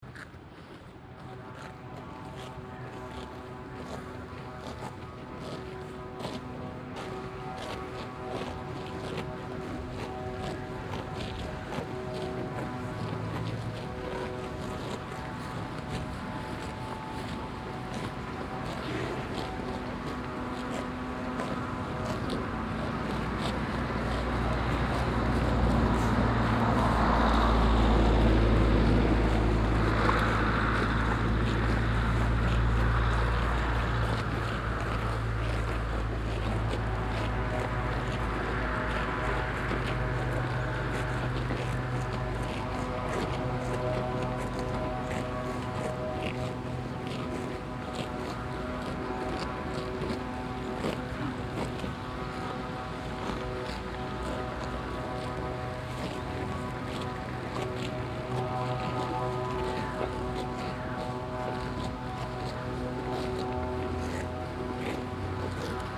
Heinerscheid, Luxemburg - Kalborn, cow herd at main street
An einem windigen Sommertag, an einer Kuhwiese auf der einen größere Herde weidet. Der Klang der Kuhmünder die Gras fressen und vorbeifahrende Autos an der Hauptstraße. Im Hintergrund Maschinengeräusche aus dem Ort.
At a windy summer day near a meadow with a bigger cow herd. The sounds of the cows eating grass and passing by cars. In the distance machine sounds coming from the village.